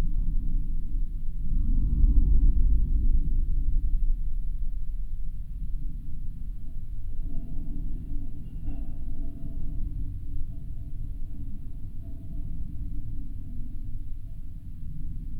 Gaižiūnai, Lithuania, fence
metallic fence between Vyzuonos botanical reserve and crossings. geophone recording, low frequencies. listening it with headphones on-the-site and seeing all crossings it reminds me some kind of deserted taiga...